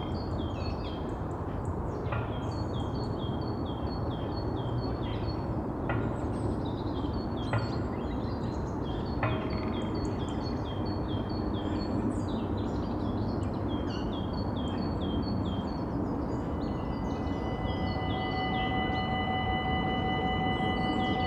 Sijsjesgaarde, Ganshoren, Belgium - Marais de Jette
recording trip with Stijn Demeulenaere and Jan Locus
Lom Uzi's + MixPre3